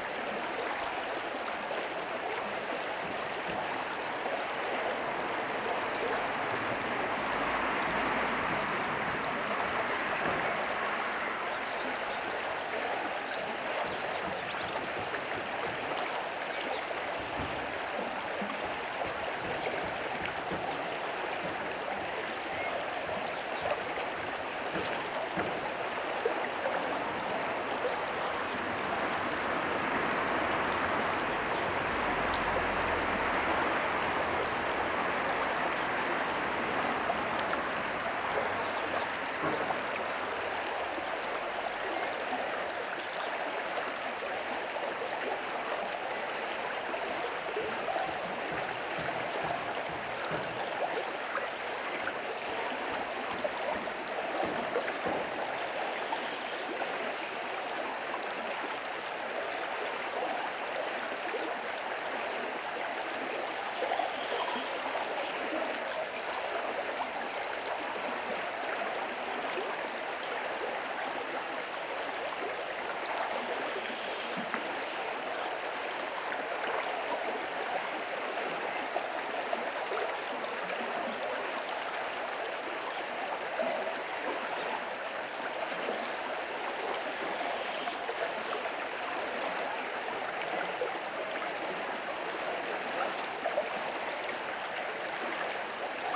Klosterberg, Bad Berka, Deutschland - Beneath the Ilm Bridge #4
*Recording technique: inverted ORTF.
vociferous tones and textures
Recording and monitoring gear: Zoom F4 Field Recorder, RODE M5 MP, Beyerdynamic DT 770 PRO/ DT 1990 PRO.